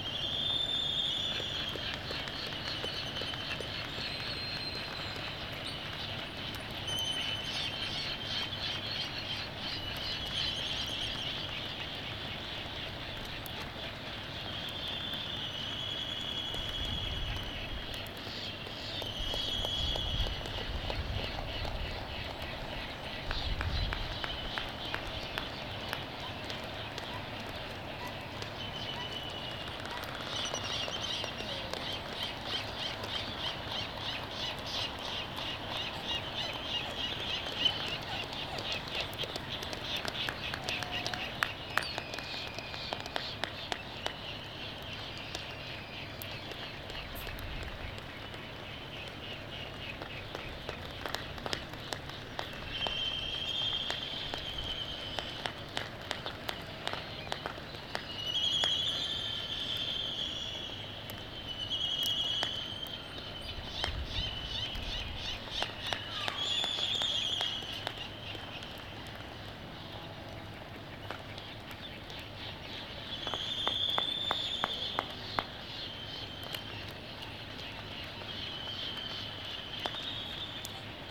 United States Minor Outlying Islands - laysan albatross soundscape ...
Charlie Barracks ... Sand Island ... Midway Atoll ... mic 3m from adult male on nest ... laysans ... whinnying ... sky moo ... groaning ... bill clappering ... other birds ... white terns ... black-footed albatross ... bonin petrels ... black noddy ... Sony ECM 959 one point stereo mic to Sony Minidisk ...
19 December 1997, 05:37